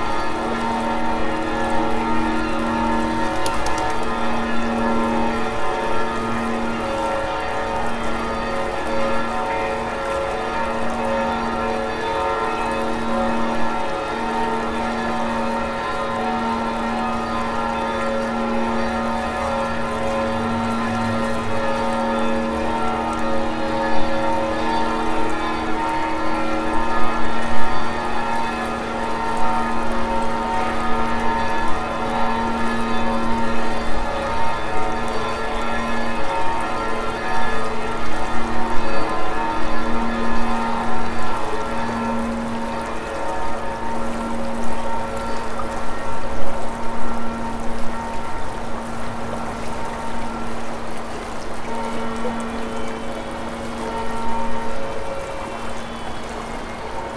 Brygidki, Gdańsk, Poland - Bells of St Bridget Church over the Radunia river
The noon bells of St Bridget Church over the Radunia river gurgling.
Apart from the standard city traffic noise there is the noise of glass polishers used nearby at the then newly built Heweliusza 18 office building.
Tascam DR-100 mk3, built-in Uni mics.
województwo pomorskie, Polska, 27 November 2019